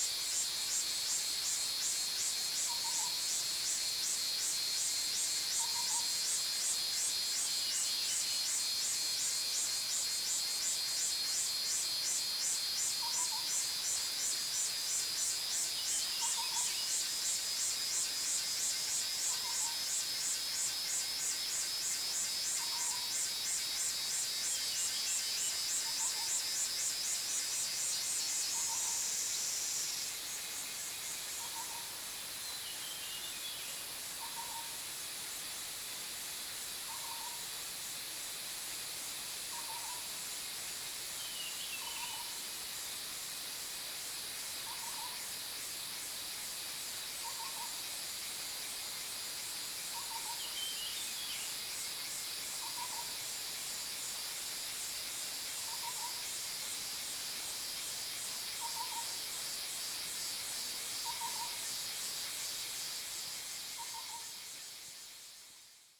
種瓜坑溪, 南投縣埔里鎮 - For downstream valley
Cicada sounds, Bird sounds, stream, For downstream valley
Zoom H2n MS+XY